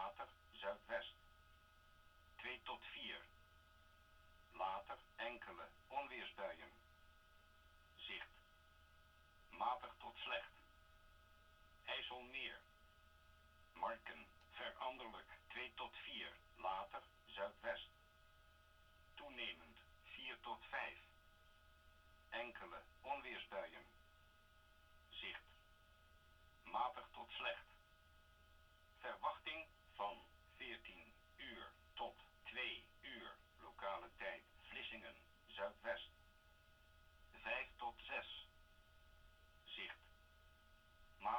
workum, het zool: marina, berth h - the city, the country & me: wheather forecast
wheather forecast of the netherlands coastguard at 11:05 pm on channel 83
the city, the country & me: june 20, 2013